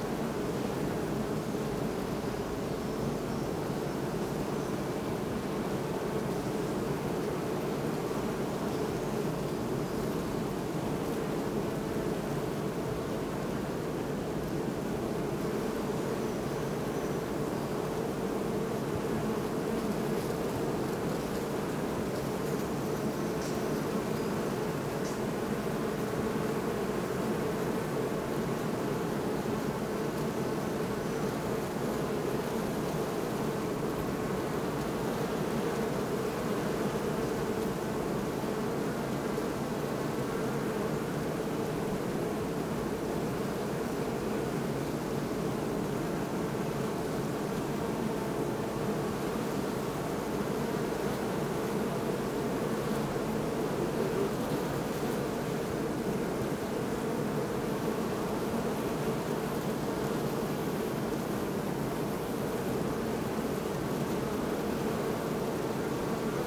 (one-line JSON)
{"title": "Botanischer Garten Oldenburg - bee hive", "date": "2018-05-26 12:55:00", "description": "Botanischer Garten Oldenburg, bee hives, hum of hundreds of bees\n(Sony PCM D50)", "latitude": "53.15", "longitude": "8.20", "altitude": "7", "timezone": "Europe/Berlin"}